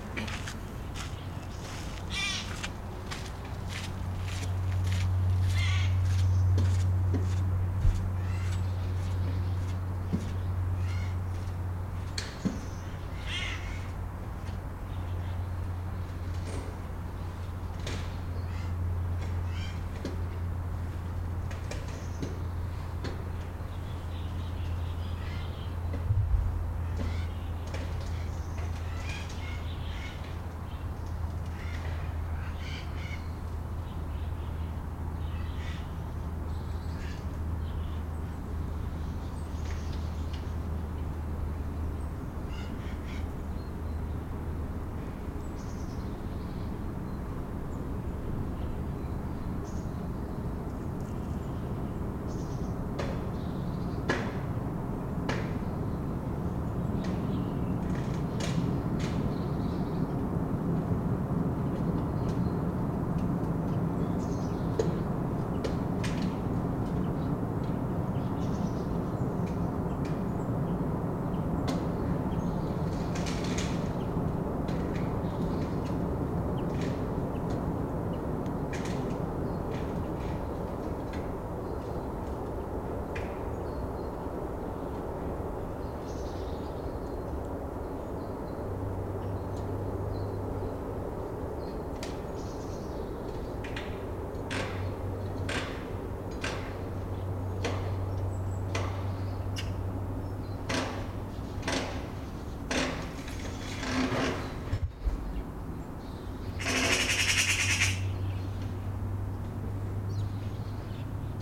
leipzig alt-lindenau, gartenanlage die quecke, morgens um 8
gartenanlage die quecke frühs um 8. man hört vögel, handwerker in der ferne, zum schluss einen zug hinter der gartenanlage in richtung bahnhof lindenau fahrend.